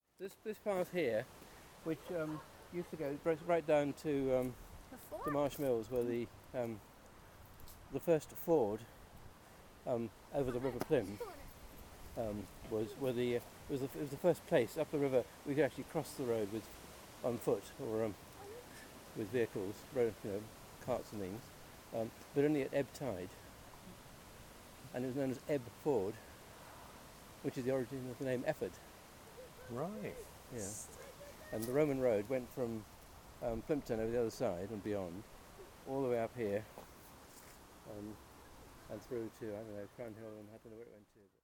Efford Walk Two: Why Efford is called Efford - Why Efford is called Efford